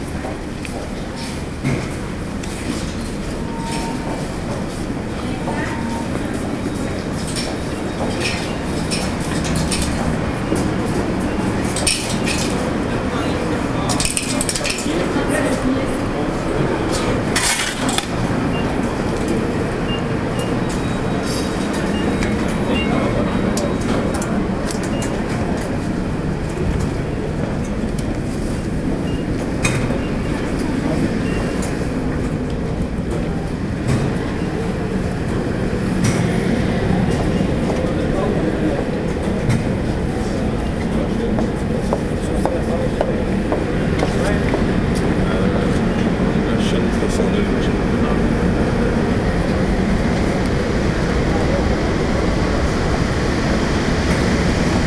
equipment used: Ipod Nano with Belkin TuneTalk
Down the stairs, through the turnstile, and into the metro, off we go.
QC, Canada, 19 January